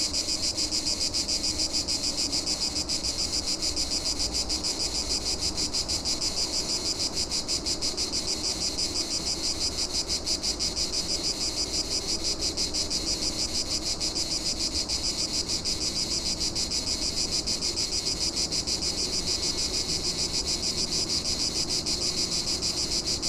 Lisbon, Portugal - Cicadas on summer
Cicadas near mid day, really loud, transit rumble and ocasional planes passing by. Recorded in XY stereo mode with a pair of cardioid oktava mics and a Tascam DR70.
Lisboa, Portugal